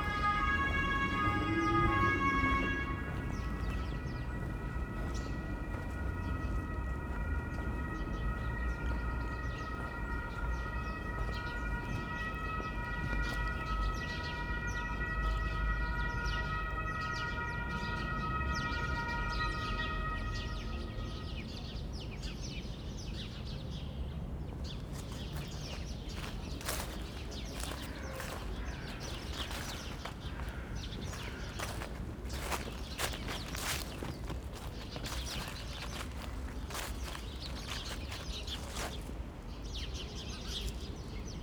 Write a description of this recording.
The recording is a walk that starts at the location on the map but finishes 350m away amongst the buildings the other side of Alexandrinenstrasse. The path is covered with dry leaves of different colours and crosses one road. A helicopter flies over and sirens approach and then stop abruptly. I couldn't see the incident and don't know what was happening. Crows, a small flock of chattering sparrows and pigeons in flight are heard, particularly at the end.